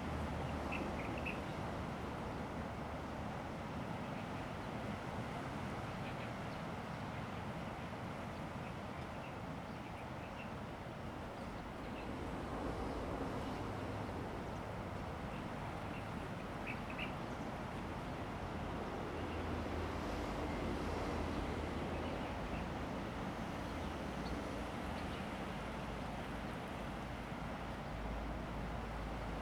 Birds singing, Sound of the waves
Zoom H2n MS +XY